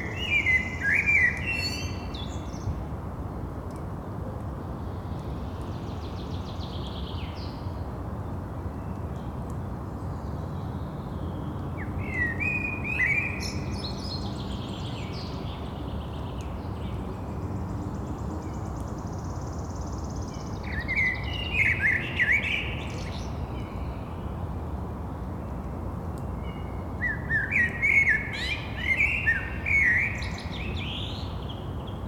recording from the Sonic Surveys of Tallinn workshop, May 2010